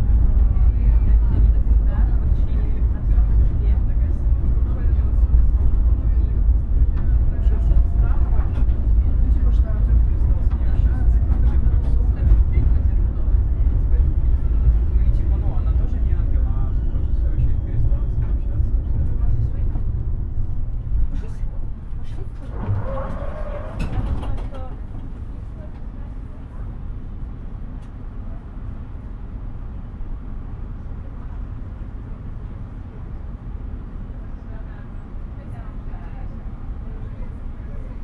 Kopli, Tallinn, Estonia - On the tram from Balti Jaam to Kadriorg
Young people discuss relationship issues
12 June, 14:34